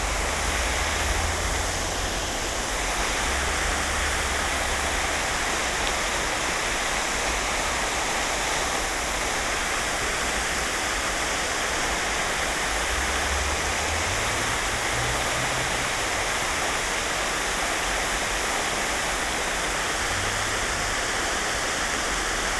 fountain in Parc Ed Klein
(Olympus LS5, Primo EM172)
Luxemburg City, Luxembourg, 4 July 2014